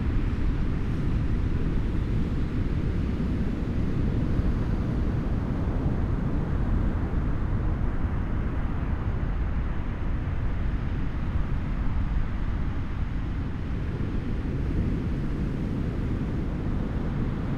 Cooks Landing, Atiu Island, Cookinseln - Pacific at midnight, high tide
Waves on the beach at a place that was signposted "Cooks Landing".It is said that James Cook first set foot on Atiu somewhere in this area. At the night of the recording there were, apart from myself, no intruders. The beach was alive with hermit crabs. The roar of the surf on the outer reef at high tide sets the background for the softer splashing and rushing of the waves on a beach consisting of seashell fragments, coral rabble and coral sand. Dummy head Microphopne facing seaward, about 6 meters away from the waterline. Recorded with a Sound Devices 702 field recorder and a modified Crown - SASS setup incorporating two Sennheiser mkh 20 microphones.